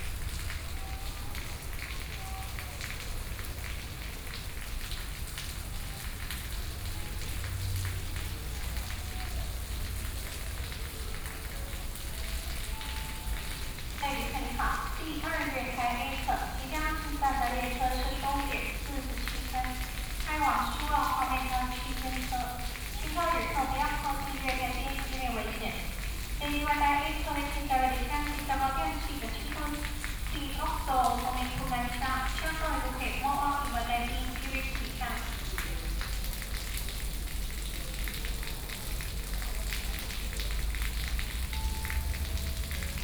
Yilan Station, Yilan City - In the station platform
In the station platform, Rainwater
Sony PCM D50+ Soundman OKM II